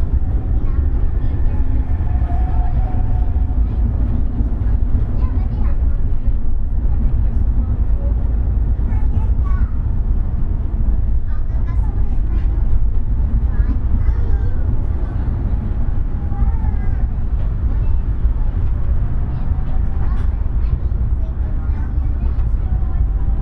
Kopli, Tallinn, Estonia - On the tram from Balti Jaam to Kadriorg
Young people discuss relationship issues
Harju maakond, Eesti, 12 June, 14:34